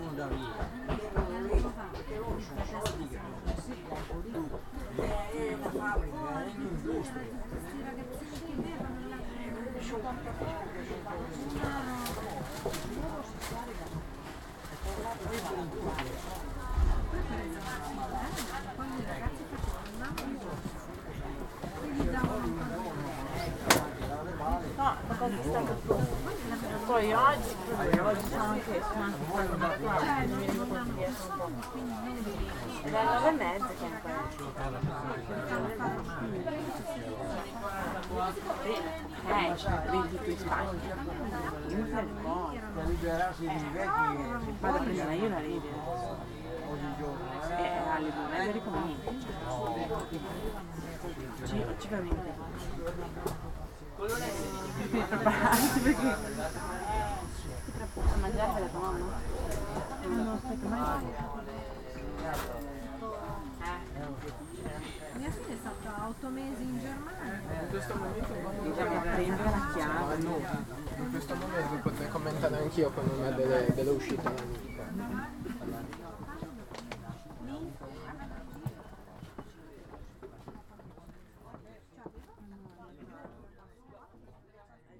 Zugfahrt von Camogli nach Sestri Levante. Lebhafte Diskussionen der Zugreisenden. Tunnelgeräusche und Durchsage 'nächster Halt: Rapallo' (natürlich auf italienisch...)